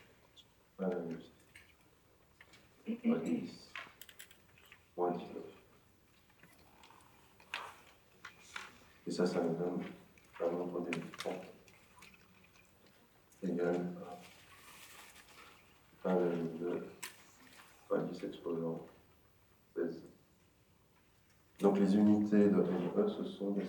Centre, Ottignies-Louvain-la-Neuve, Belgique - A course of electricity
In the very big Agora auditoire, a course of electricity. In first, a pause, and after, the course.